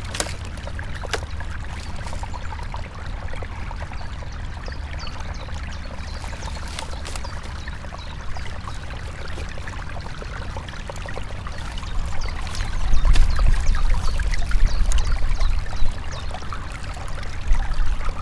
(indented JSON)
{
  "title": "old river bed, drava, melje, maribor - stream spring poema",
  "date": "2015-03-29 13:01:00",
  "description": "spring waters, brush and stones, flock of fish ... as if they contemplate how to get from the backwaters to the old riverbed",
  "latitude": "46.55",
  "longitude": "15.69",
  "timezone": "Europe/Ljubljana"
}